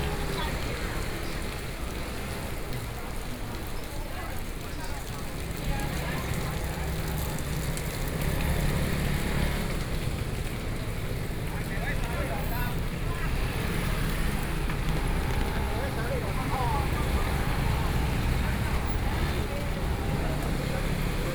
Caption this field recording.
Rainy Day, Walking in the traditional market, Zoom H4n+ Soundman OKM II